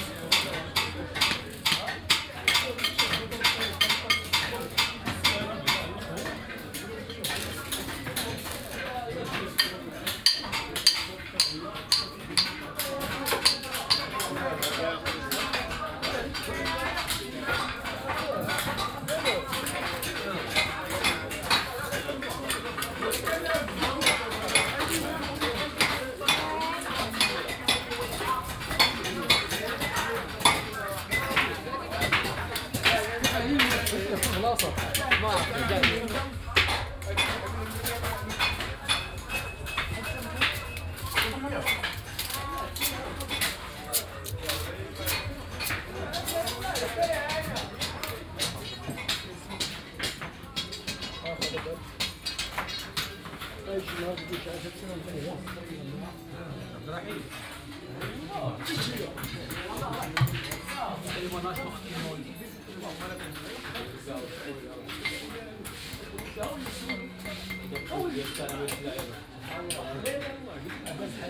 Souk Haddadine, Marrakesch, Marokko - metal workers, ambience
the souk of the metal worker seems to be separated in two areas, one for making the goods, the other one for selling them. the former is indeed more private and less decorative. however, workers are busy all over the place.
(Sony PCM D50, OKM2)
Marrakesh, Morocco